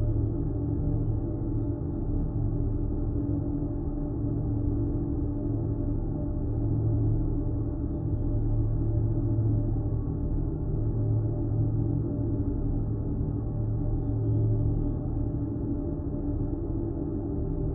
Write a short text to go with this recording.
Berlin Königsheide forest, one in a row of drinking water wells, now suspended, (Sony PCM D50, DIY contact microphones)